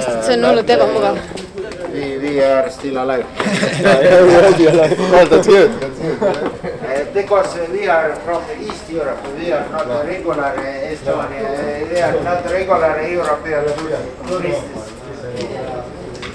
wrong and right tourists, Luhikajalg, Tallinnn
21 April 2011, 14:43